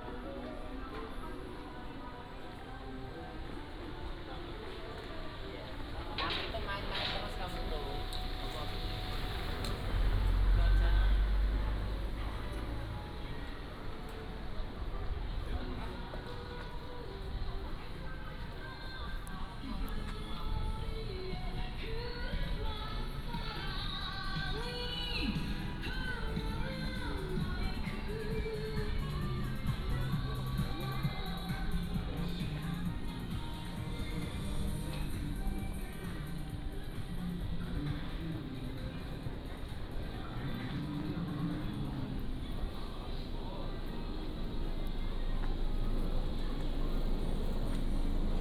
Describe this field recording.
Walking through the different neighborhoods and shops area, Traffic Sound